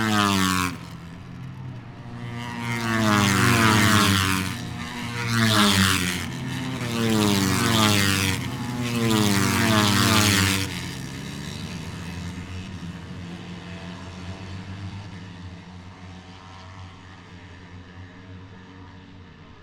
Silverstone, UK - british motorcycle grand prix 2016 ... moto three ...
moto three free practice two ... Maggotts ... Silverstone ... open lavaliers on T bar strapped to a sandwich box on a collapsible chair ... windy grey afternoon ...